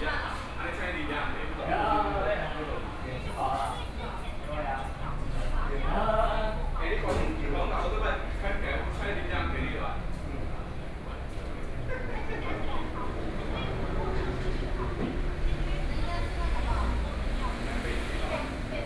{
  "title": "Sec., Zhongshan Rd., 宜蘭市和睦里 - In the restaurant",
  "date": "2014-07-25 19:06:00",
  "description": "In the restaurant, Traffic Sound\nSony PCM D50+ Soundman OKM II",
  "latitude": "24.75",
  "longitude": "121.75",
  "altitude": "11",
  "timezone": "Asia/Taipei"
}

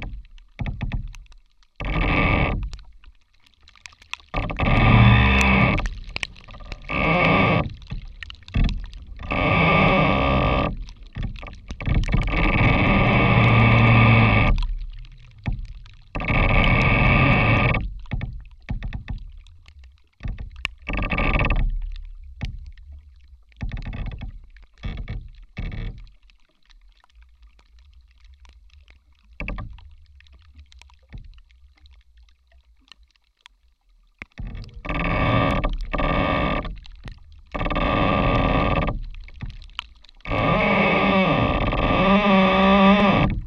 {
  "title": "Šlavantai, Lithuania - Creaking rotten tree",
  "date": "2021-03-19 17:30:00",
  "description": "A rotten tree, creaking and squeaking from being moved by the wind. A slight rain is also heard falling on the tree's surface, but it all calms down towards the end. Recorded with 4 contact microphones and ZOOM H5.",
  "latitude": "54.15",
  "longitude": "23.65",
  "altitude": "142",
  "timezone": "Europe/Vilnius"
}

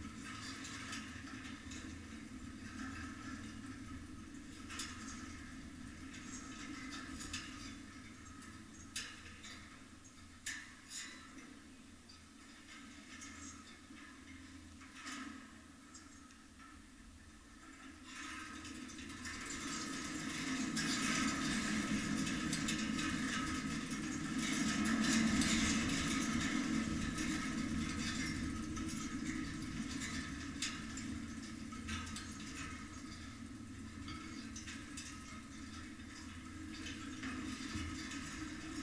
{
  "title": "Lithuania, Vilnius, metallic ring-fence",
  "date": "2013-01-30 14:20:00",
  "description": "metallic fence with contact microphones",
  "latitude": "54.68",
  "longitude": "25.30",
  "altitude": "137",
  "timezone": "Europe/Vilnius"
}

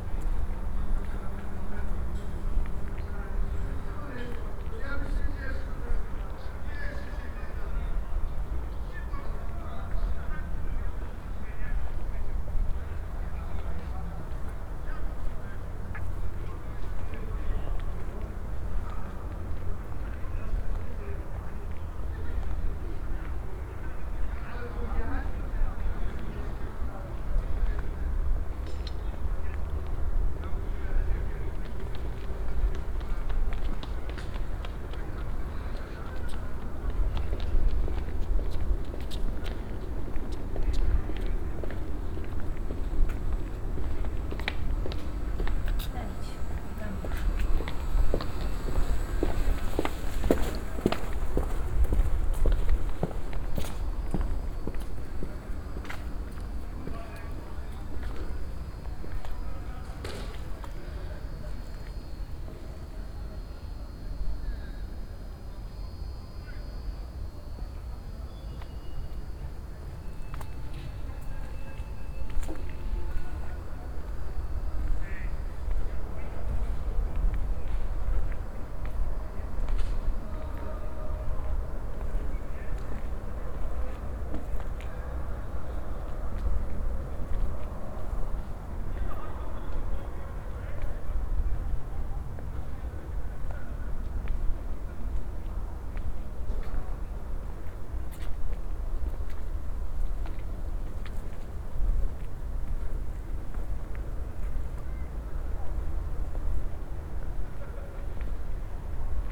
{
  "title": "Poznan, Jana III Sobieskiego housing estate - building 21",
  "date": "2015-08-29 22:21:00",
  "description": "(binaural) evening wander around vast housing estate. in front of building 21. it's warm. people sit on benches and echos of their conversations reverberate off the sides of high buildings. different sounds can be heard form the myriad of windows. coughs, groans, laughs, talks, radios. passing by a broken intercom. at the end of the recording i'm crossing a street and walk into a fright train that passes about two meters in front of me. (sony d50 + luhd pm01bin)",
  "latitude": "52.46",
  "longitude": "16.91",
  "altitude": "101",
  "timezone": "Europe/Warsaw"
}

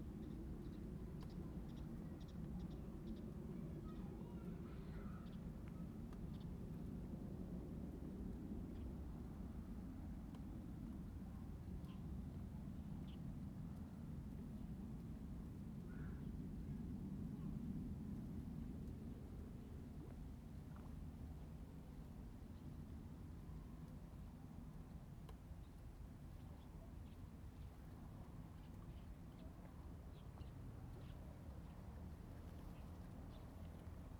{
  "title": "成功國小, Huxi Township - Next to the reservoir",
  "date": "2014-10-21 14:14:00",
  "description": "Next to the reservoir, next the school, Birds singing\nZoom H2n MS +XY",
  "latitude": "23.58",
  "longitude": "119.62",
  "altitude": "13",
  "timezone": "Asia/Taipei"
}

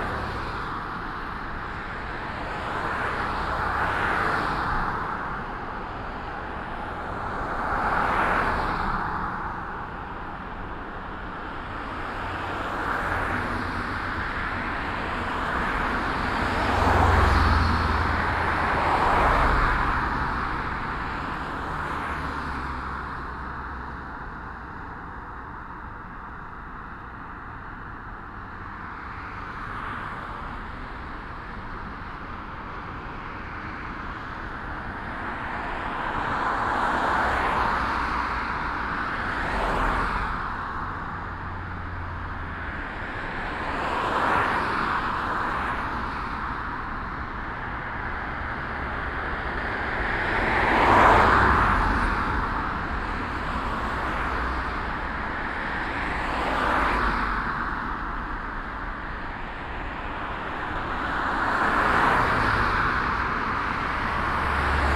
{
  "title": "vancouver, granville bridge, on the walk way",
  "description": "walking by night across this gigh bridge with a very narrow footway. traffic passing by.\nsoundmap international\nsocial ambiences/ listen to the people - in & outdoor nearfield recordings",
  "latitude": "49.27",
  "longitude": "-123.13",
  "timezone": "GMT+1"
}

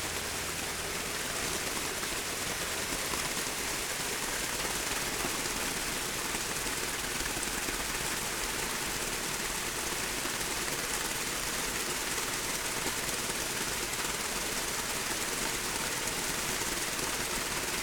{
  "title": "Alnwick, UK - Waterglass ... water sculpture ...",
  "date": "2016-11-14 12:00:00",
  "description": "Alnwick gardens ... Waterglass by William Pye ... the installation produces a membrane of water around 330 degrees of a circle ..? the effect is like looking through a window ... the slightest breeze causes the effect to shimmer ... walked slowly to the centre ... lavalier mics clipped to baseball cap ...",
  "latitude": "55.41",
  "longitude": "-1.70",
  "altitude": "60",
  "timezone": "Europe/London"
}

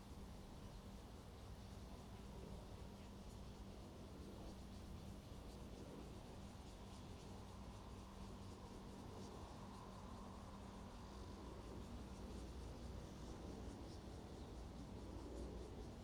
{
  "title": "Ln., Sec., Xinguang Rd., Pingzhen Dist. - The train runs through",
  "date": "2017-08-04 16:00:00",
  "description": "Next to the railroad tracks, The train runs through\nZoom H2n MS+ XY",
  "latitude": "24.94",
  "longitude": "121.21",
  "altitude": "152",
  "timezone": "Asia/Taipei"
}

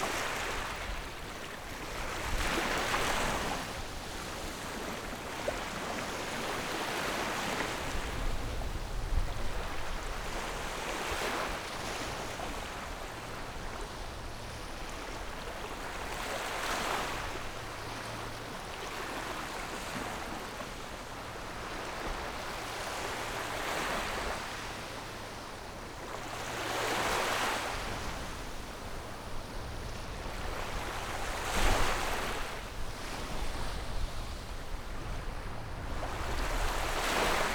{
  "title": "大菓葉漁港, Xiyu Township - Small beach",
  "date": "2014-10-22 15:12:00",
  "description": "Small beach, Sound of the waves\nZoom H6+Rode NT4",
  "latitude": "23.59",
  "longitude": "119.52",
  "altitude": "8",
  "timezone": "Asia/Taipei"
}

